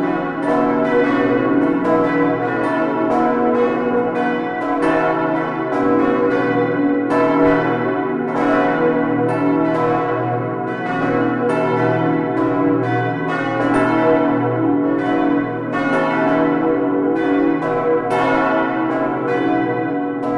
Nivelles, Belgique - Nivelles bells
The bells of the Nivelles church at twelve, a beautiful melody of four bells. Before the bells ringing, there's an automatic tune played on the carillon. Recorded inside the tower with Tim Maertens ans Thierry Pauwels, thanks to Robert Ferrière the carillon owner.
Nivelles, Belgium